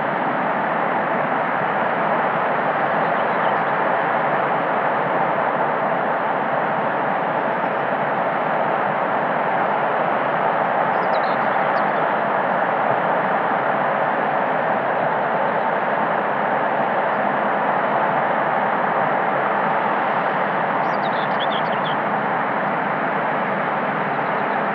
Emmerthal, Germany NUCLEAR POWER PLANT (Grohnde) - SOUND RECORDINGS OF NUCLEAR POWER PLANT (Grohnde)
A sound recordings of the Power Plant from the right side of the Weser river. A distance from my location to the Power Plant was around 500m. I used a narrow band microphone for recordings where the microphone is pointing at. Some birds were flying around me, which are also hearable inside the recording.
ZOOM H4n PRO Handheld Recorder
AT 897 Microphone
Niedersachsen, Deutschland, 22 October